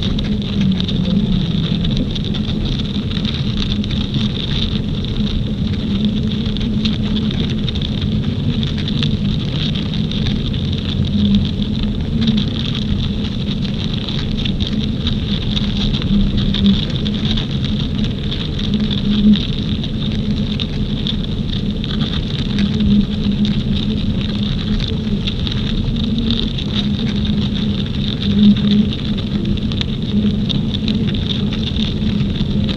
Hlevnik, Dobrovo v Brdih, Slovenia - Bees Recordings in the Beehive Through The Metal Net with Contact Microphone
Bees Recordings Through The Metal Net in the Beehive with Magnetic Contact Microphone.
Magnetic Contact Microphone (Monkey Sound)
Handheld Recorder TASCAM DR100-MKIII
Bees that day were quite irritated also because of the bad weather, which it was on the way.